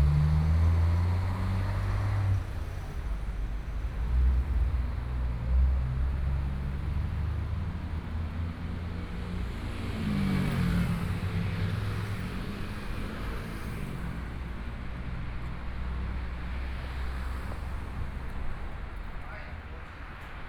Jianguo N. Rd., Taipei - walking on the Road
walking on the Road, Traffic Sound, Motorcycle Sound, Pedestrians on the road, Binaural recordings, Zoom H4n+ Soundman OKM II